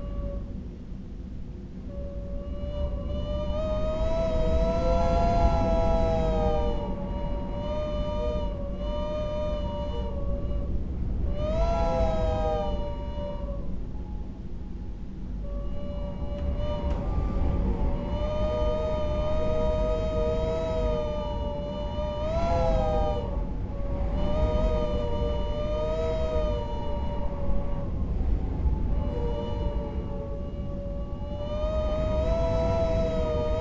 Dumfries, UK - whistling window seal ...
whistling window seal ... in double glazing unit ... farmhouse tower ... olympus ls 14 integral mics on mini tripod ...
1 February 2022, ~12:00